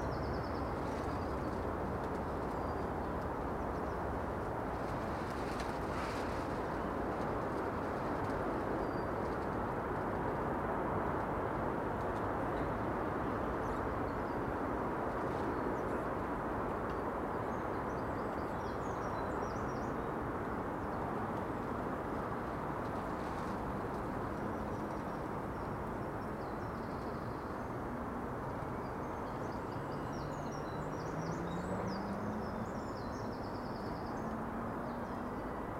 The Drive Moor Crescent Little Moor Highbury Mildmay Road Brentwood Avenue Tankerville Terrace
Cordons espaliers and pleaches
an orchard of pruning
a blue tit flies through
Neatened trees
against the fence
the tumble of water tank compost bin and bug hotels
Gavin May Queen Howgate Wonder
Ouillin’s Gage
Vranja Quince